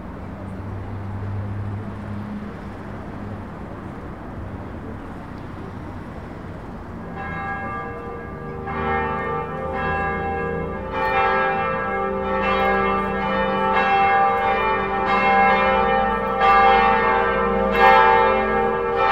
Brussels, Altitude 100, the bells
Bruxelles, les cloches de lAltitude 100
13 January 2008, 10:04